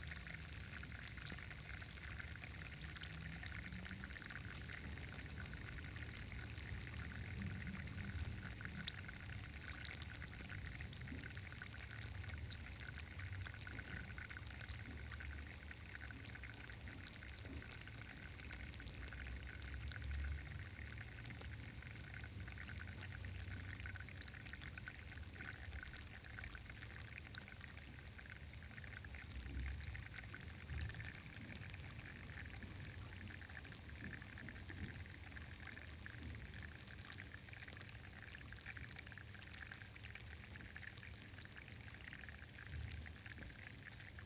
Kelbourne St, Glasgow, UK - Dissolving Multivitamins
Recorded with a MixPre-3 and a pair of DPA 4060s
Glasgow City, Scotland, United Kingdom, 9 February